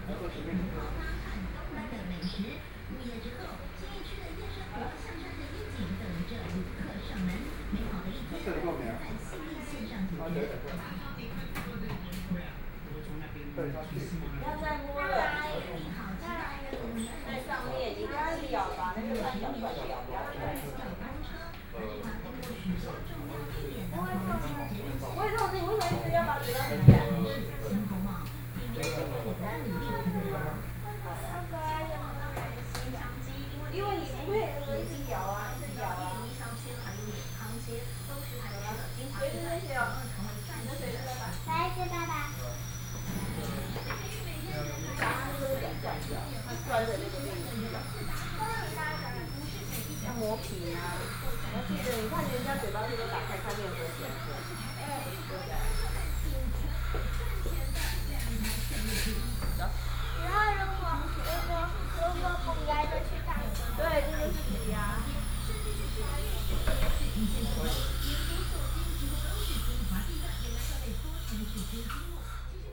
Mother and child, Dental Clinic, TV sound, Physicians and the public dialogue, Binaural recordings, Sony Pcm d50+ Soundman OKM II
Beitou District, Taipei City, Taiwan